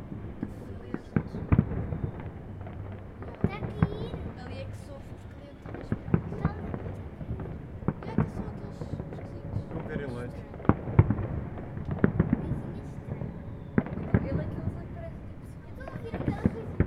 new year 2014, lisbon, fireworks, people yelling, recorder H4n
Lisbon, Portugal, 1 January 2014